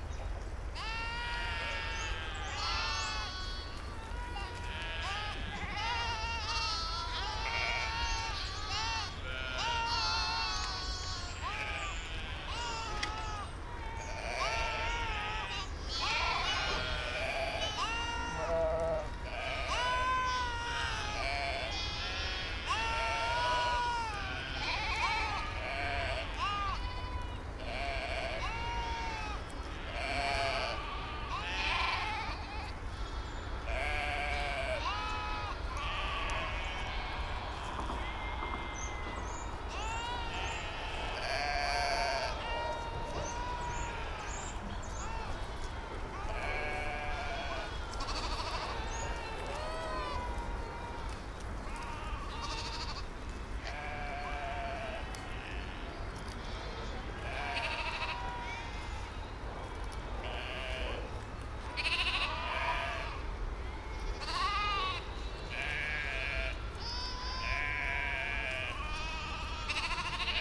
Riehl, Köln, Deutschland - flock of sheep
Köln, Riehl, Riehler Aue, meadow along river Rhein, a flock of sheep
(Sony PCM D50, Primo EM172)
Köln, Germany, 26 April